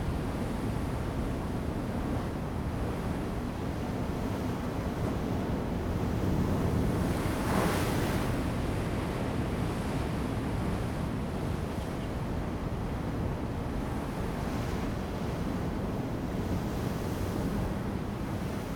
Gangzai, Manzhou Township - Beside the fishing port
Beside the fishing port, wind sound, Sound of the waves, Tetrapods
Zoom H2n MS+XY